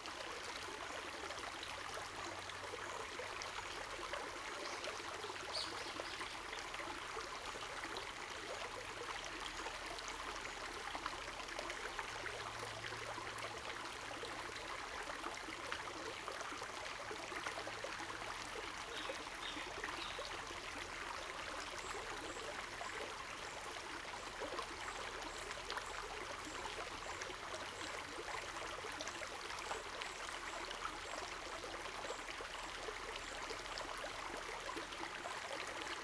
TaoMi Li., 中路坑溼地 Puli Township - sound of streams
Bird calls, Crowing sounds, The sound of water streams
Zoom H2n MS+XY